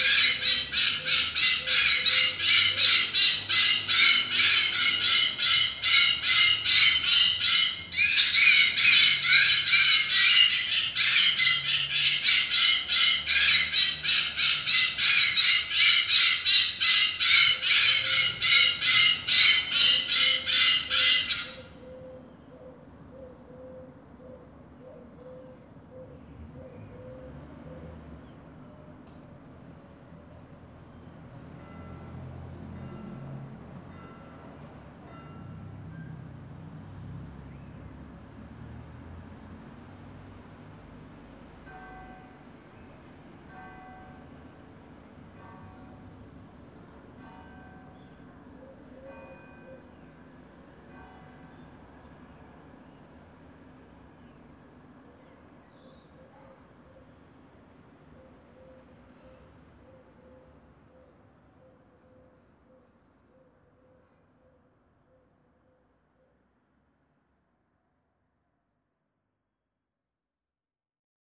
France, Ille, Emetteur de cris / Bird signal blaster - Emetteur de cris / Bird signal blaster
At the post office square, scaring bird calls blast from a loudspeaker, wanting to repel inviding starlings.
Ille-sur-Têt, France